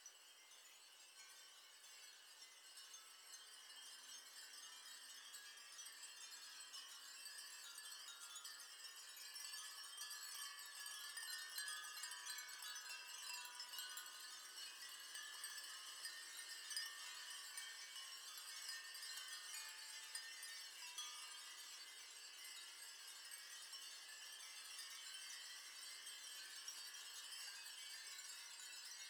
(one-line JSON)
{"title": "Nara, Tagawa, Fukuoka, Japan - 3000 Glass Wind Chimes at Byodoji", "date": "2019-08-17 12:53:00", "description": "Byodoji hangs a collection of more than 3000 glass wind chimes every summer.", "latitude": "33.62", "longitude": "130.81", "altitude": "68", "timezone": "Asia/Tokyo"}